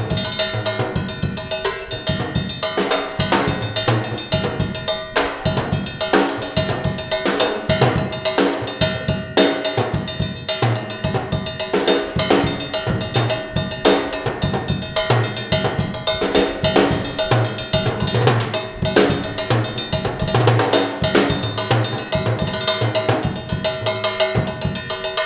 Palacio de Gobierno, Cienfuegos, Cuba - Percussionist practicing in open courtyard upstairs
A fantastic percussionist practicing in the open-air courtyard upstairs in the Palacio de Gobierno.